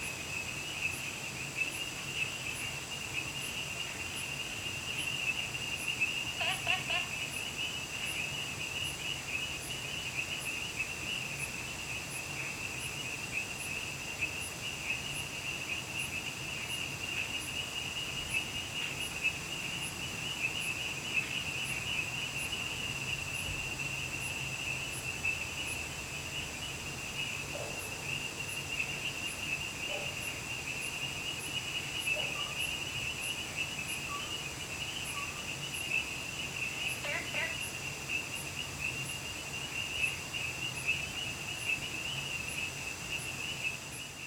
Frogs chirping, In Wetland Park
Zoom H2n MS+XY
Nantou County, Puli Township, 桃米巷11-3號, 10 August 2015, 22:56